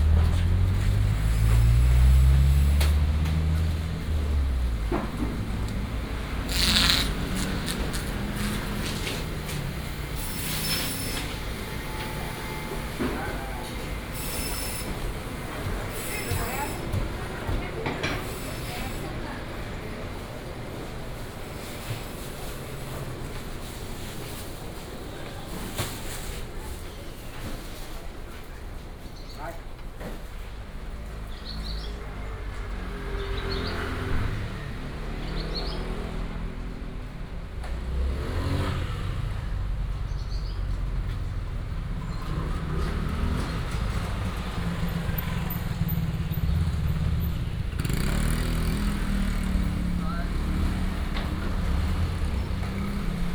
Fumin Rd., Wanhua Dist., Taipei City - Walking in the traditional market
Walking in the traditional market, Traffic sound, Before the start of the business is in preparation